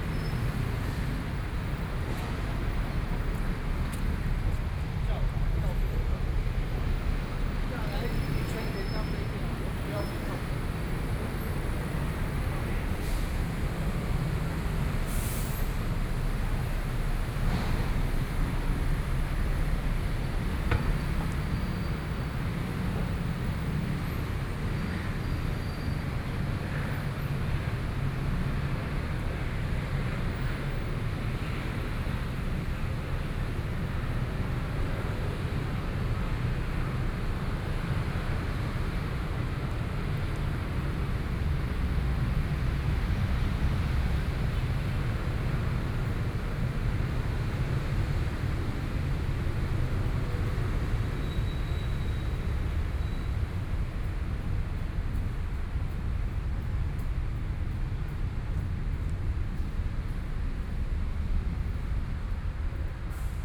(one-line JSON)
{"title": "中山區聚葉里, Taipei City - Walking across the different streets", "date": "2014-02-27 08:26:00", "description": "Walking across the different streets, Traffic Sound, Environmental sounds, Birdsong, Went to the main road from the alley\nBinaural recordings", "latitude": "25.06", "longitude": "121.52", "timezone": "Asia/Taipei"}